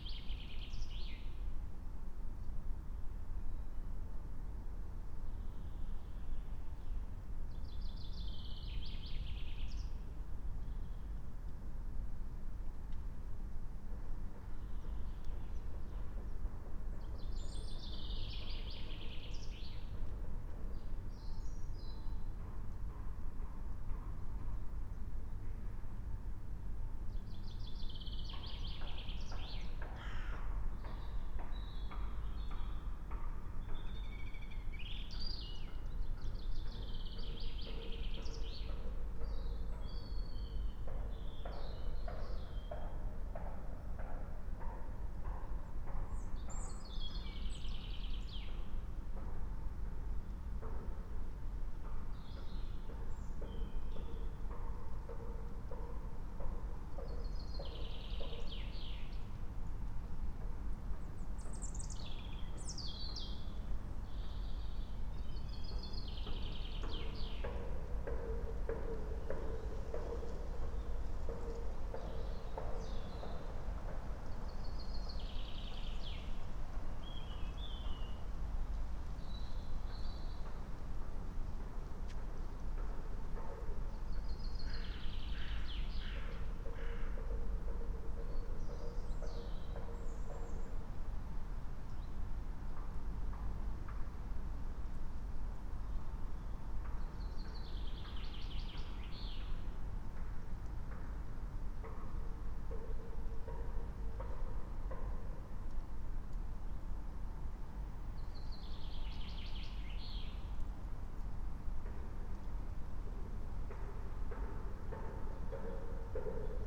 19:03 Lingen, Emsland - forest ambience near nuclear facilities
Landkreis Emsland, Niedersachsen, Deutschland, 26 April 2022